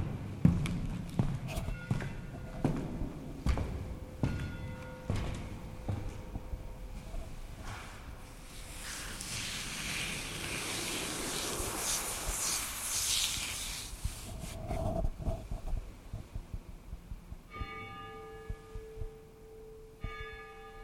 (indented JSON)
{
  "title": "Edison power station",
  "description": "nterieur of the Edison Transformer Station in Jeruzalemská street, before the reconstruction. The building was designed by E.A. Libra in 1926 and was in function till 90 ies.",
  "latitude": "50.08",
  "longitude": "14.43",
  "altitude": "211",
  "timezone": "Europe/Berlin"
}